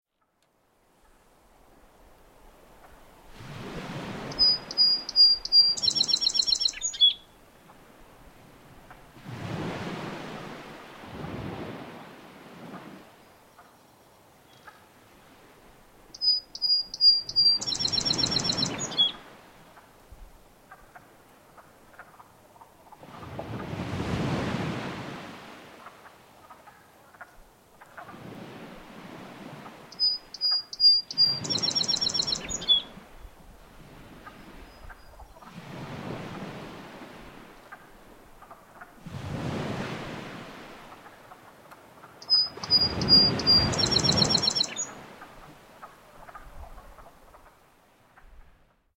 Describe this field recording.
Bull Beach in Taylor Head Provincial Park early on April 5th 2010. The Song Sparrow and Woodfrogs can be heard amongst the shoreline waves. Fostex FR2LE, Rode NT4 mic.